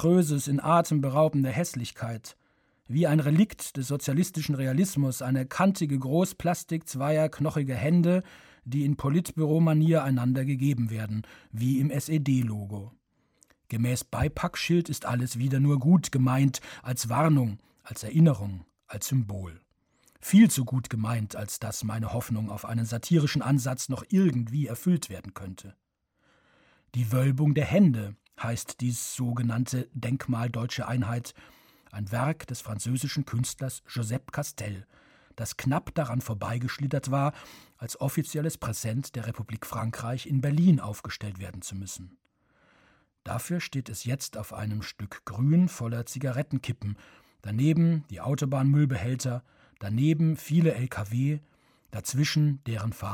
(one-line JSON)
{"title": "an der a 2 - lkw-parkplatz", "date": "2009-08-08 21:37:00", "description": "Produktion: Deutschlandradio Kultur/Norddeutscher Rundfunk 2009", "latitude": "52.22", "longitude": "11.05", "altitude": "182", "timezone": "Europe/Berlin"}